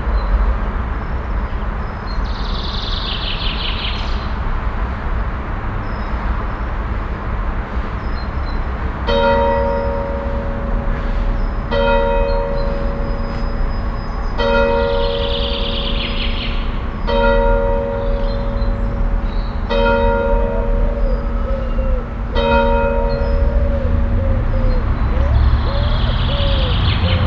heiligenhaus, hauptstrasse, church bells
verkehr von der hauptstrasse und kirchglocken der evangelischen alten kirche am abend
soundmap nrw: social ambiences/ listen to the people - in & outdoor nearfield recordings, listen to the people